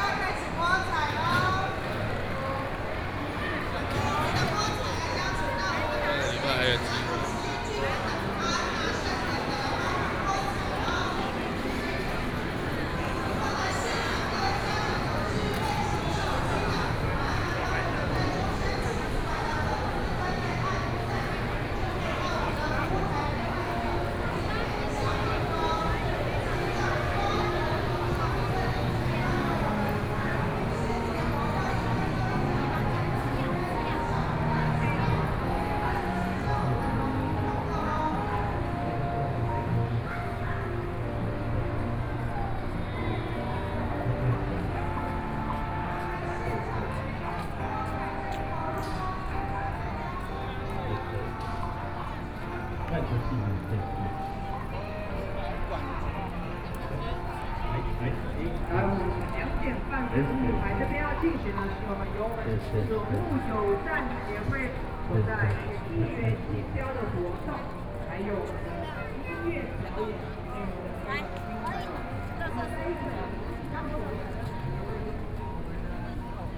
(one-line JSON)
{"title": "Taipei Expo Park - SoundWlak", "date": "2013-08-18 14:27:00", "description": "Holiday Bazaar, Sony PCM D50 + Soundman OKM II", "latitude": "25.07", "longitude": "121.52", "altitude": "5", "timezone": "Asia/Taipei"}